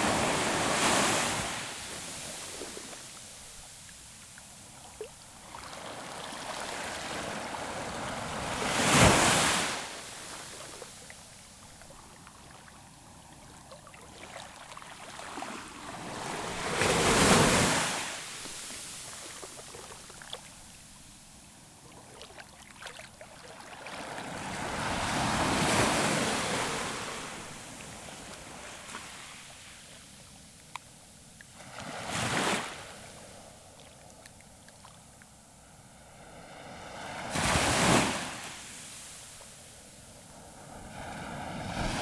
La Faute-sur-Mer, France - The sea during high tide
Recording of the sea during high tide. As the beach is wide, the waves are big and strong.